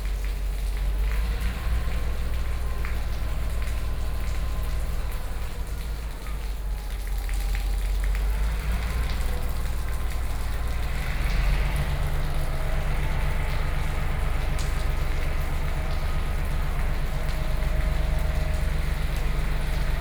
Yilan Station, Yilan City - In the station platform
In the station platform, Rainwater
Sony PCM D50+ Soundman OKM II